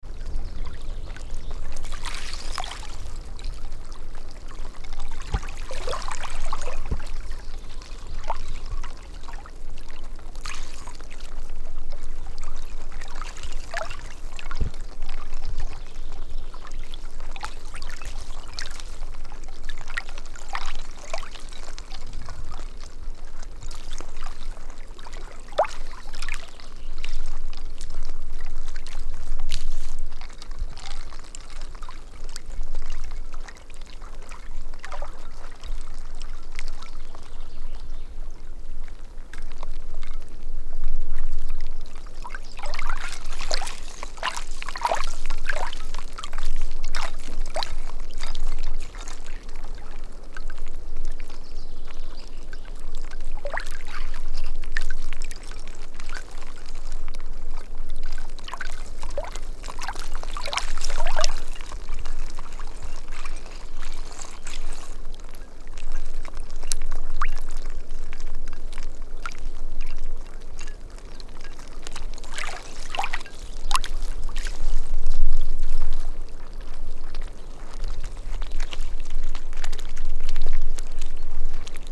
Stor-Tavelsjön, near Långviksvallen
Water lapping against rapidly thawing ice on lake.
29 April 2011, ~13:00